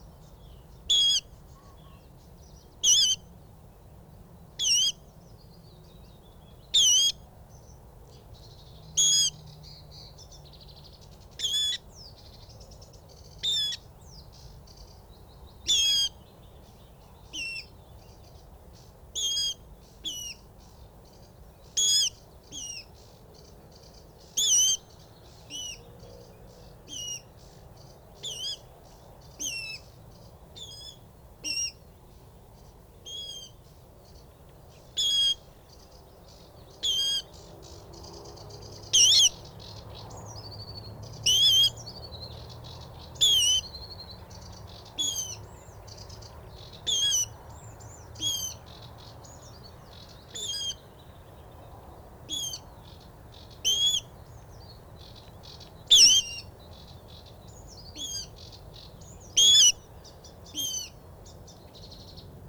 High St N, Dunstable, UK - water rails ...
water rails ... parabolic ... recorded where was once a reed bed and water logged scrub ... not getting too anthropormorphic but these two birds where absolutely indignant at my presence ... probably had fledglings near by ... they are highly secretive birds ... bird calls ... song from blue tit ... sedge warbler ... willow warbler ... background noise ...
England, UK, 2 May 2000